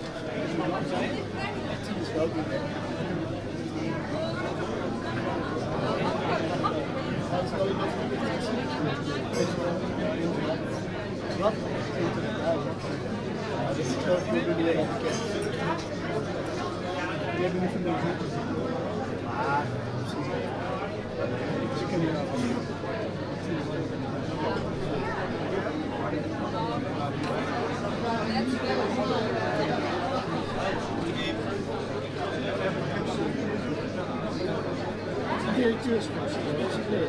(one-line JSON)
{"title": "tilburg, oude markt, theatre, audience", "date": "2009-06-22 12:13:00", "description": "audience in the theatre foyer while intermission of a classic music performance\ninternational soundmap : social ambiences/ listen to the people in & outdoor topographic field recordings", "latitude": "51.55", "longitude": "5.08", "altitude": "18", "timezone": "Europe/Berlin"}